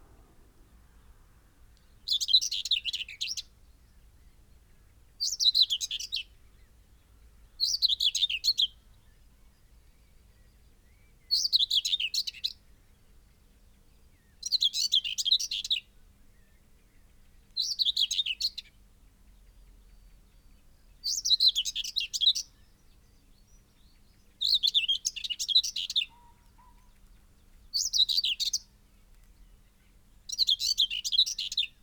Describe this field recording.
whitethroat song soundscape ... dpa 4060s clipped to bag to zoom h5 ... bird calls ... song ... from chaffinch ... wood pigeon ... linnet ... wren ... chaffinch ... crow ... blackbird ... song thrush ... skylark ... pheasant ... yellowhammer ... extended time edited unattended recording ... bird often moves away visiting other song posts ... occasionally its song flight can be heard ...